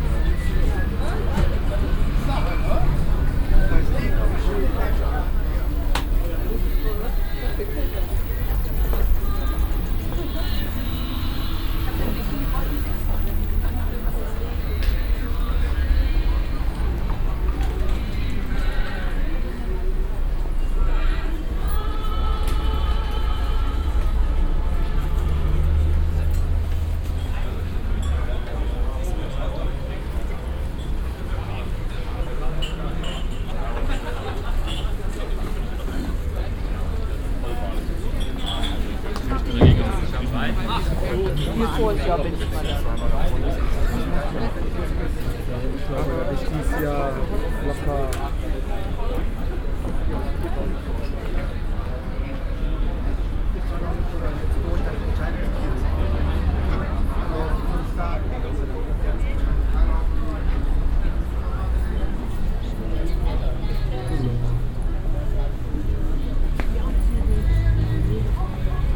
cologne, rudolfplatz, weihnachtsmarkt
weihnachtsmarkt ambience am rudolfplatz mittags. hier: "dreaming of a white christmas" zwischen diversen fressständen und dem stadtverkehr
soundmap nrw - weihnachts special - der ganz normale wahnsinn
social ambiences/ listen to the people - in & outdoor nearfield recordings